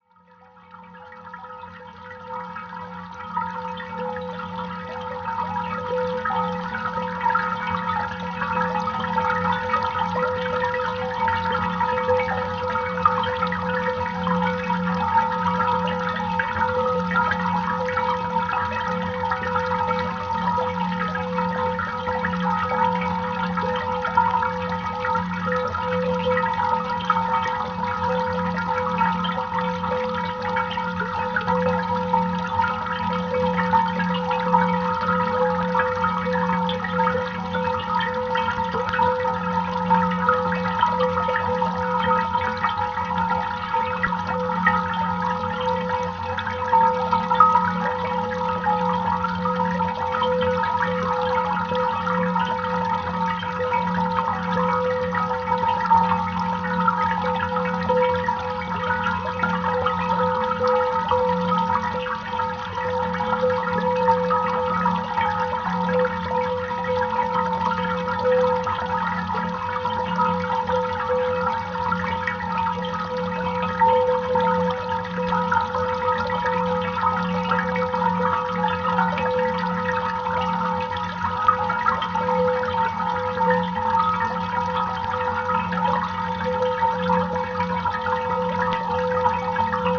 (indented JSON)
{
  "title": "Bathampton, Bath, UK - Cast Iron Water Fountain #2",
  "date": "2012-04-02 16:00:00",
  "description": "Recorded using a Zoom H4 & a home made contact mic.",
  "latitude": "51.39",
  "longitude": "-2.33",
  "altitude": "47",
  "timezone": "Europe/London"
}